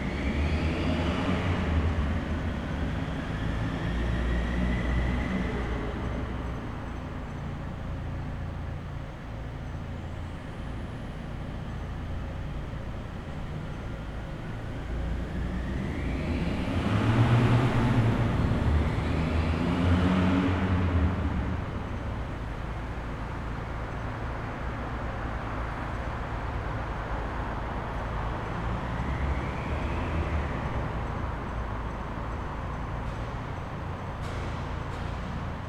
Revontuli parking garage, Rovaniemi, Finland - Vehicles driving inside a parking garage
Late at night, some vehicles are running up and down the parking garage, revving their engines. Zoom H5 with default X/Y capsule.